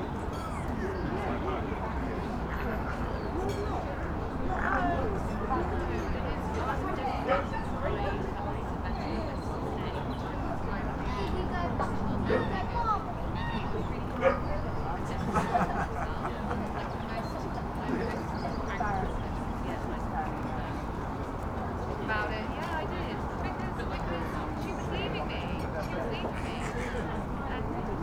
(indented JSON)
{
  "title": "Oxford, UK - outside restaurant, ambience",
  "date": "2014-03-15 13:55:00",
  "description": "ambience outside of a restaurant near river Thames, at a sunny Saturday afternoon in early spring\n(Sony PCM D50)",
  "latitude": "51.74",
  "longitude": "-1.25",
  "altitude": "56",
  "timezone": "Europe/London"
}